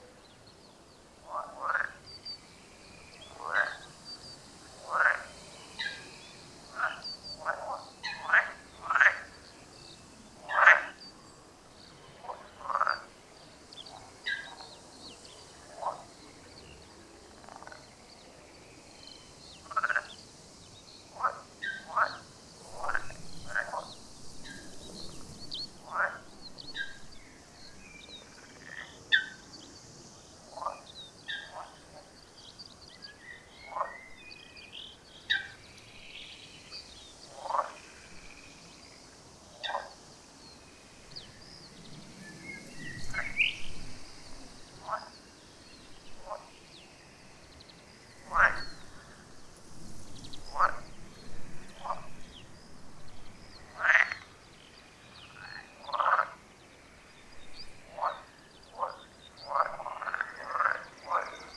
{"title": "Lithuania, soundy swamp", "date": "2011-05-14 17:00:00", "description": "swamp, frogs amd ever present birds", "latitude": "55.49", "longitude": "25.72", "timezone": "Europe/Vilnius"}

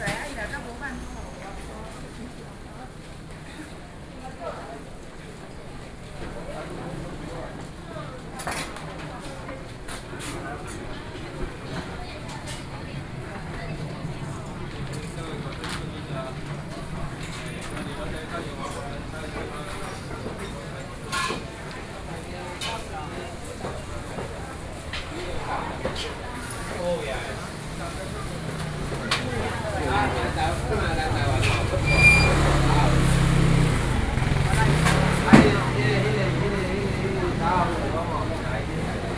{"title": "Linyi St., Zhongzheng Dist., Taipei City - Traditional markets", "date": "2012-11-03 07:50:00", "latitude": "25.03", "longitude": "121.53", "altitude": "16", "timezone": "Asia/Taipei"}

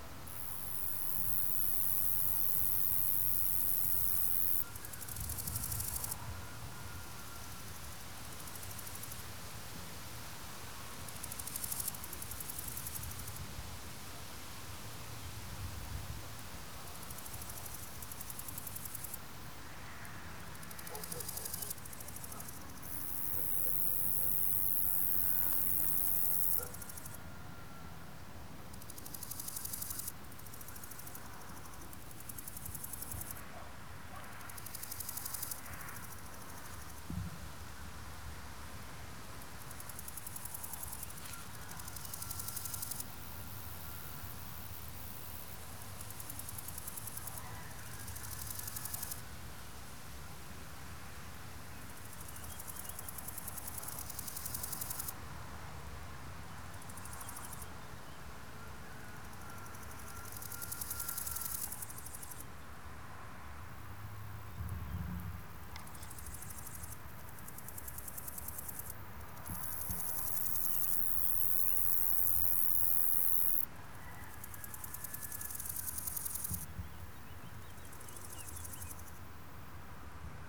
{"title": "Poznan, Morasko, field road - third conversation", "date": "2013-07-05 16:31:00", "description": "this is the third kind of cricket sounds i picked up on the same road. interesting that same animal uses so many different sounds within one area and time frame.", "latitude": "52.47", "longitude": "16.91", "altitude": "99", "timezone": "Europe/Warsaw"}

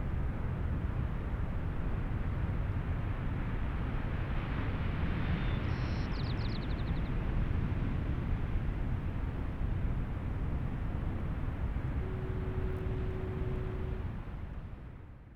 {"title": "Golden Gate morning, Headlands California", "description": "sounds of the bay in the early morning", "latitude": "37.83", "longitude": "-122.52", "altitude": "116", "timezone": "Europe/Tallinn"}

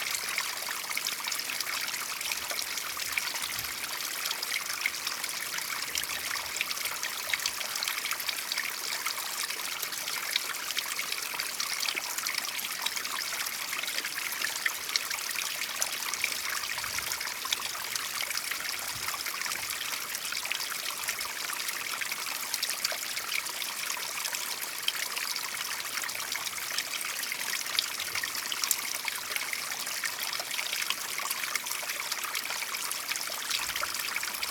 {"title": "A small waterfall, White Sea, Russia - A small waterfall.", "date": "2014-06-12 14:23:00", "description": "A small waterfall.\nНебольшой водопад, стекающий с Зимних гор.", "latitude": "65.42", "longitude": "39.70", "timezone": "Europe/Moscow"}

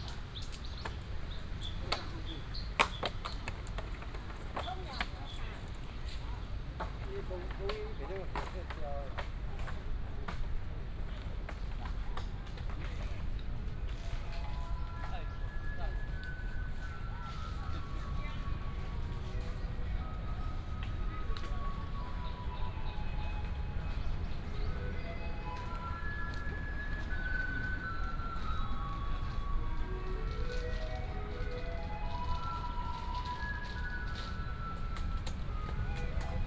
{"title": "Yuanlin Park, Changhua County - Walk through the Park", "date": "2017-04-06 15:08:00", "description": "Walk through the Park, Traffic sound, Many people play chess, sound of birds, Children's play area", "latitude": "23.96", "longitude": "120.57", "altitude": "36", "timezone": "Asia/Taipei"}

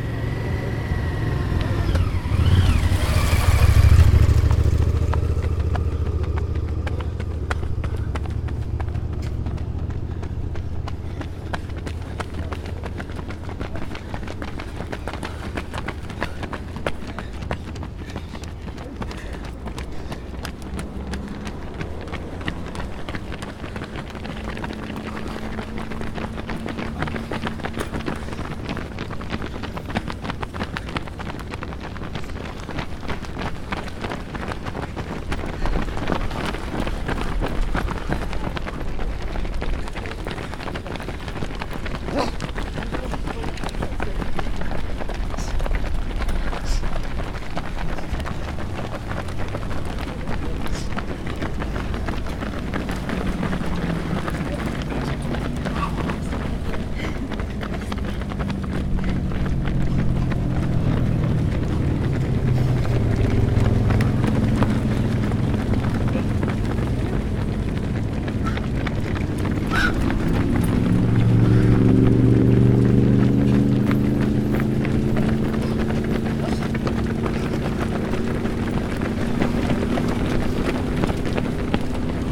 {"title": "Voie Lacustre, lac du Bourget 73100 Tresserve, France - course pédestre", "date": "2013-04-14 09:35:00", "description": "Au bord du lac du Bourget sur la voie lacustre réservée ce jour là pour une course à pied, les 10km du lac organisée par l'ASA Aix-les-bains la symphonie des foulées et des souffles dans l'effort, avec en arrière plan la circulation routière .", "latitude": "45.69", "longitude": "5.89", "altitude": "235", "timezone": "Europe/Paris"}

